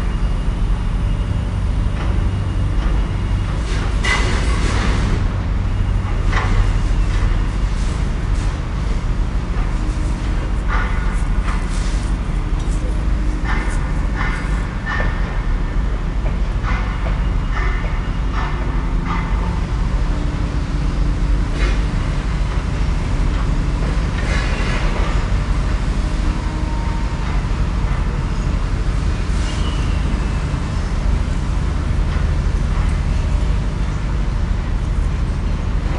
Oliphant St, Poplar, London, UK - RHG #2.1
Recorded with a pair of DPA 4060s and a Marantz PMD661.